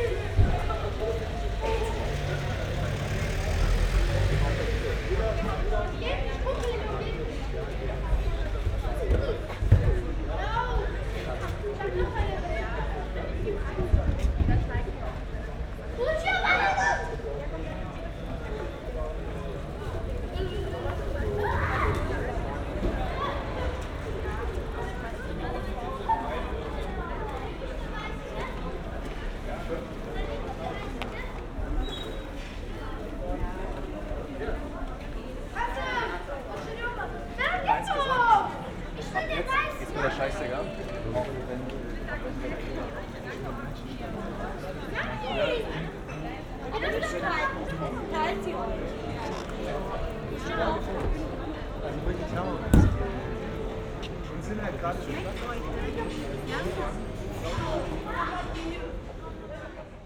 Weidengasse, Köln - Friday evening street live
ambience in Weidengasse, Köln, Friday evening, in front of a restaurant, preparations for a wedding
Cologne, Germany, 6 July